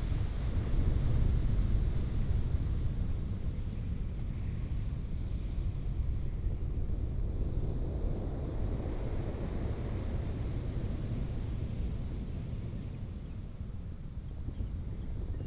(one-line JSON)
{"title": "Nantian, Daren Township, Taitung County - Morning seaside", "date": "2018-03-28 08:28:00", "description": "Morning seaside, Bird call, Sound of the waves", "latitude": "22.28", "longitude": "120.89", "altitude": "4", "timezone": "Asia/Taipei"}